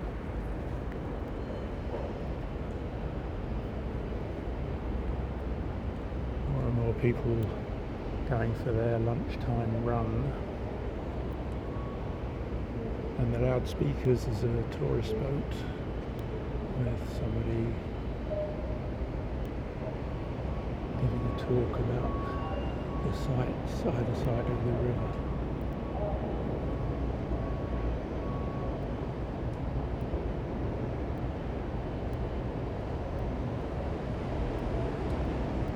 Walk through air conditioning alley (Kennet Wharf Lane) to the river, Vintners Place, Upper Thames St., London, UK - Walk through air conditioning alley to the river
On this walk, along Kennet wharf Lane, to the river one is enclosed by the industrial building on either side and immersed in sound from a succession of air conditioning outlets. When reaching the river bank loudspeakers can be heard. It is a guide on a passing tourist boat talking about the historic sights in view.